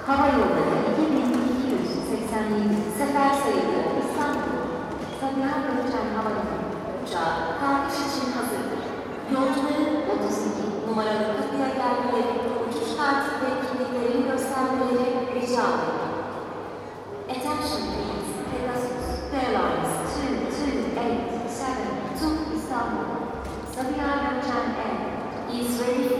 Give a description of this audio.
Recording of airport announcements for a flight to Istanbul. AB stereo recording made from internal mics of Tascam DR 100 MK III.